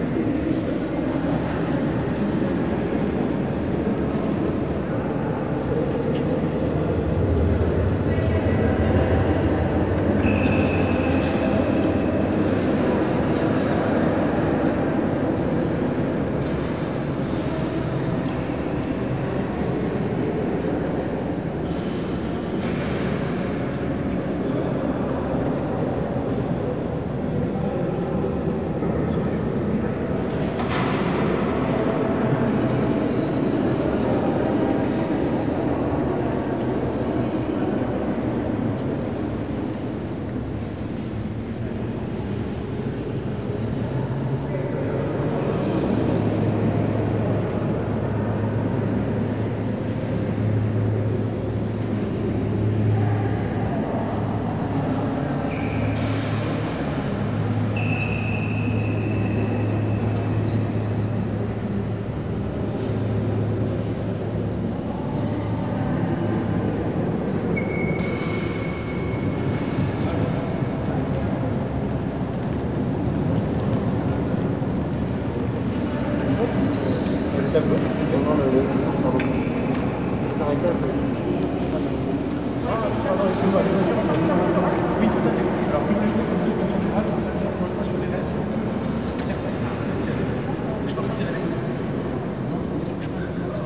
Rue Joseph Lakanal, Toulouse, France - The Jacobins
The Jacobins, a Dominican monastery built in 1229, is an exceptional testimony to southern gothic design. This brick architecture uses the same principles developed for the cathedrals of the kingdom of France.
massive huge reverb captation : Zoom H4n